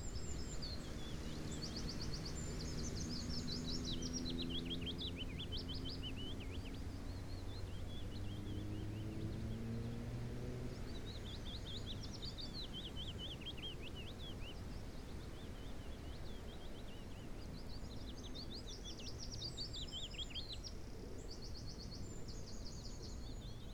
Mansbrook Wood, Woods Corner, East Sussex - Willow Warblers
Willow Warblers recorded at Mansbrook Wood near Wood's Corner, East Sussex. Tascam DR-05
Heathfield, UK, 26 May, 10:30